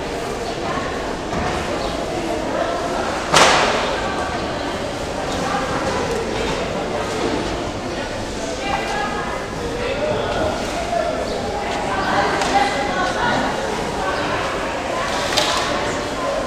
Mercado da Ribeira, São Paulo, Lisbon, Portugal - Mercado da Ribeira revisited
Inside the Mercado da Ribeira.